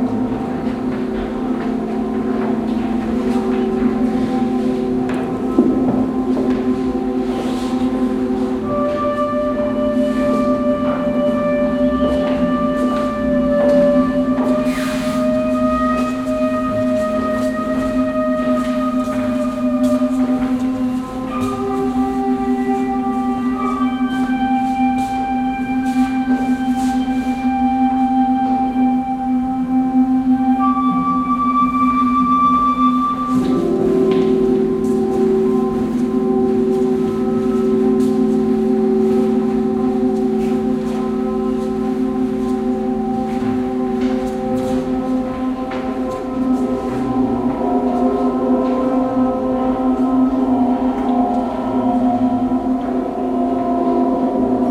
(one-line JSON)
{"title": "Mitte, Kassel, Deutschland - Kassel, old station, north wing, d13, media installation", "date": "2012-09-12 15:30:00", "description": "At the documenta 13 exhibition in one of the buildings at the norther wings of the old station. The sound of a media installation by Haris Epamininoda and Daniel Gustav Cramer. Also to be heard the steps of visitors and silent talking.\nsoundmap d - social ambiences, art places and topographic field recordings", "latitude": "51.32", "longitude": "9.49", "altitude": "182", "timezone": "Europe/Berlin"}